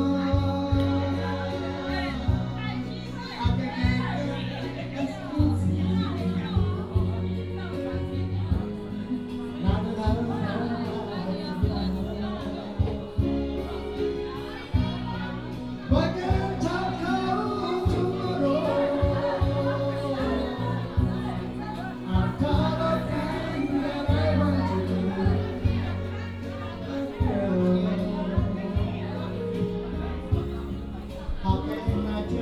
金峰鄉公所正興村, Jinfeng Township - At a tribal party
At a tribal party, Paiwan people
Jinfeng Township, Taitung County, Taiwan